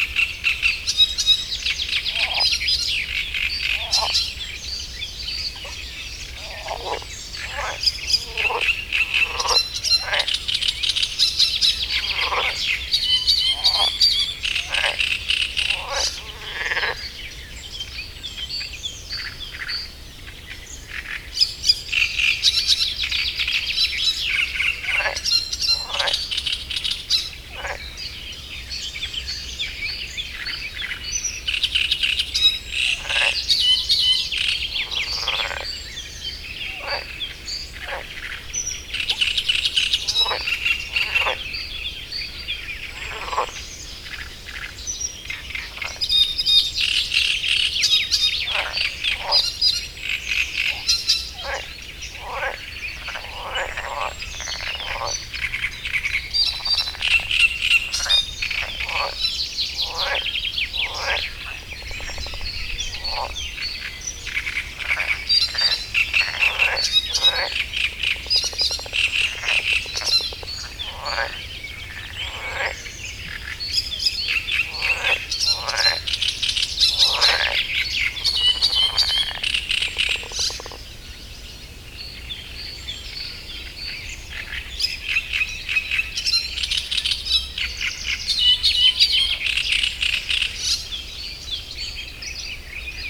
Gmina Tykocin, Poland - great reed warbler and marsh frogs soundscape ...
Kiermusy ... great reed warbler singing ... frog chorus ... sort of ... pond in hotel grounds ... open lavalier mics either side of a furry table tennis bat used as a baffle ... warm sunny early morning ...
Kiermusy, Poland